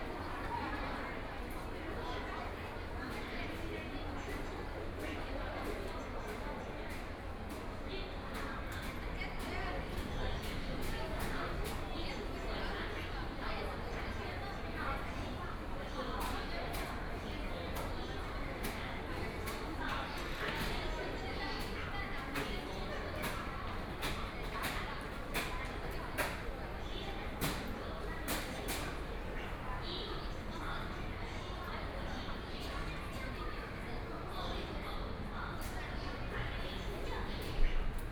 Tiantong Road Station, Shanghai - Toward the subway station
From shopping malls to metro station, The sound of the crowd, Station broadcast messages, Binaural recording, Zoom H6+ Soundman OKM II